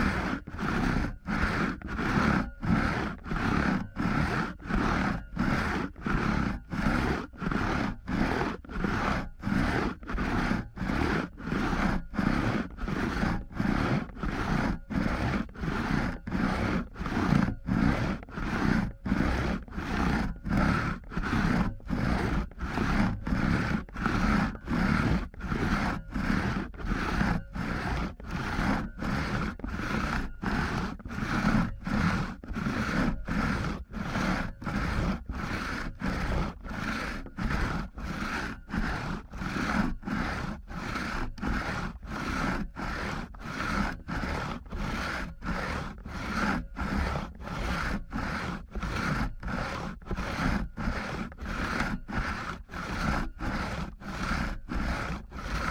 Forest Garden, UK - 2-person crosscut
2 June, 19:58